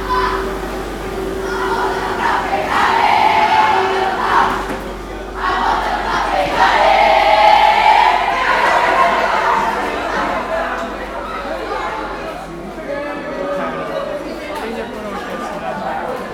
Coimbra-B, Coimbra, Portugal - Coimbra B train station
trains, kids singing and playing games on the platform, train announcements, people talking